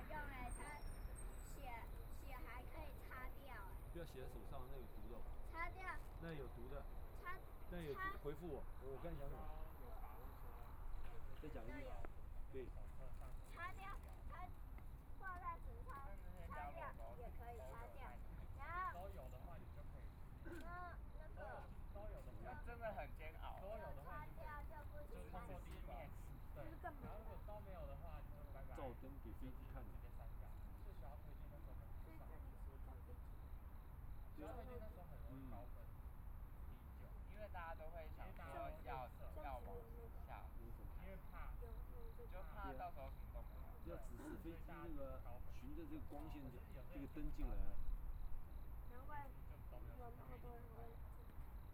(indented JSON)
{
  "title": "Taipei City, Taiwan - The airport at night",
  "date": "2014-02-16 19:59:00",
  "description": "The airport at night, Traffic Sound, Binaural recordings, Zoom H4n+ Soundman OKM II",
  "latitude": "25.07",
  "longitude": "121.54",
  "timezone": "Asia/Taipei"
}